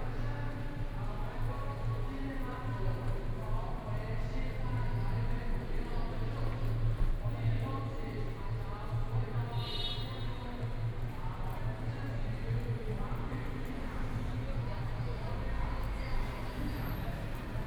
Guanqian Rd., Taipei City - soundwalk
from National Taiwan Museum to Taipei Station, Binaural recordings, Zoom H6+ Soundman OKM II